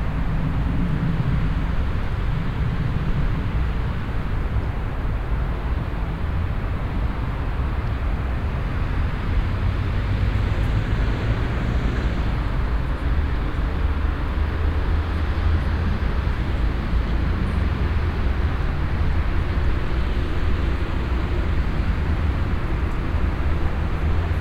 cologne, mediapark, brücke
On the bridge that leads over a small artificial lake on a late afternoon. Some mellow wind and some passengers.
soundmap nrw - social ambiences - sound in public spaces - in & outdoor nearfield recordings
20 September 2008, ~12:00